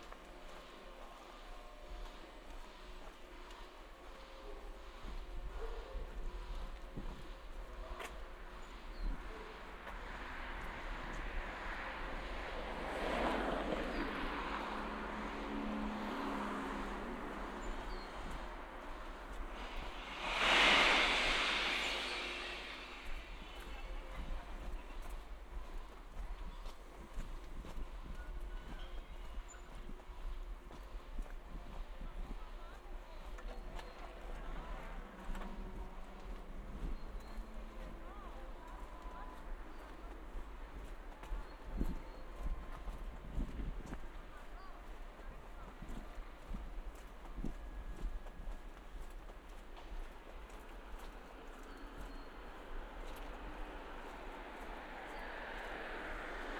"Round Noon bells on Saturday with open market in the time of COVID19" Soundwalk
Chapter XVIX of Ascolto il tuo cuore, città. I listen to your heart, city
Saturday April 18th 2020. San Salvario district Turin, walking to Corso Vittorio Emanuele II and back, thirty nine days after emergency disposition due to the epidemic of COVID19.
Start at 11:55 p.m. end at 12:20p.m. duration of recording 35'30''
Files has been filtered in post editing to limit wind noise.
The entire path is associated with a synchronized GPS track recorded in the (kmz, kml, gpx) files downloadable here: